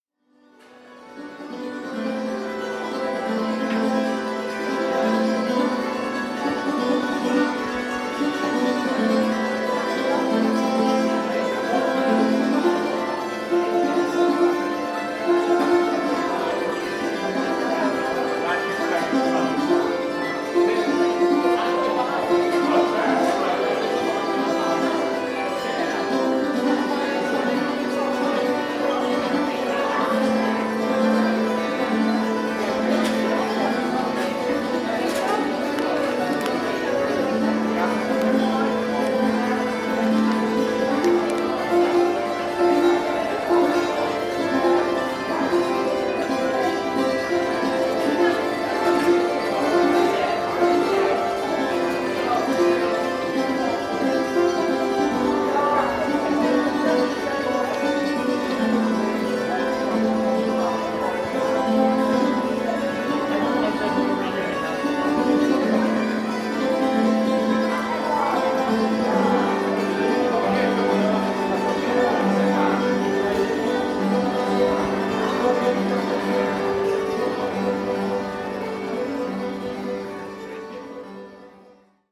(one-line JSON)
{"title": "London Borough of Southwark, Greater London, UK - Tunnel Busking", "date": "2013-01-10 13:02:00", "description": "Cimbalom player in pedestrian tunnel.", "latitude": "51.51", "longitude": "-0.09", "altitude": "3", "timezone": "Europe/London"}